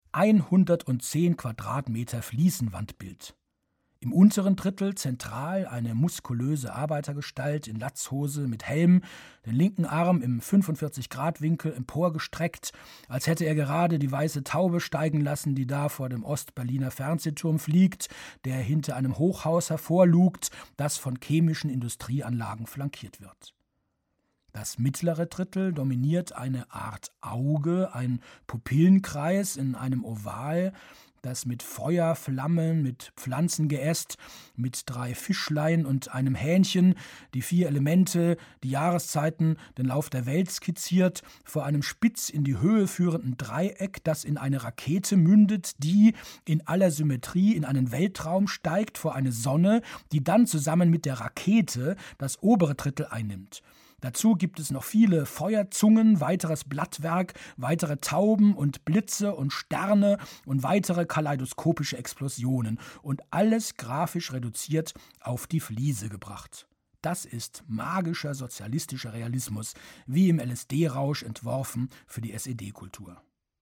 boizenburg (elbe) - rudolf-tarnow-schule
Produktion: Deutschlandradio Kultur/Norddeutscher Rundfunk 2009
Boizenburg, Germany